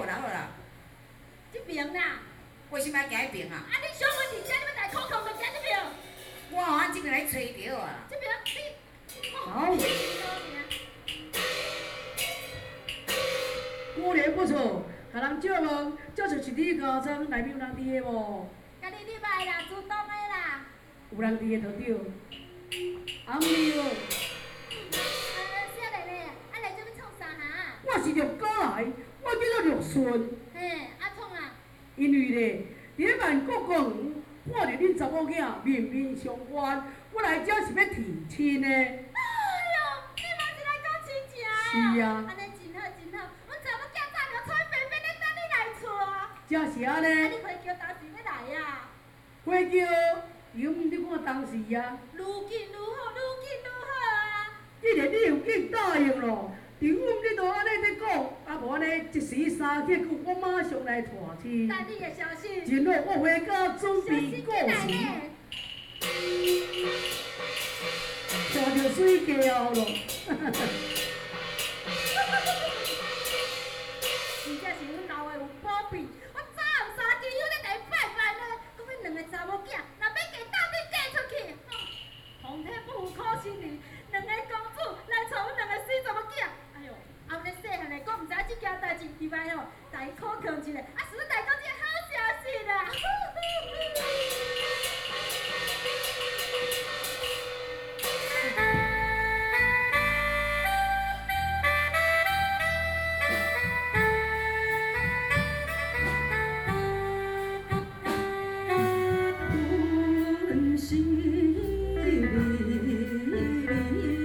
Beitou, Taipei - Taiwanese Opera
Taiwanese Opera, Zoom H4n + Soundman OKM II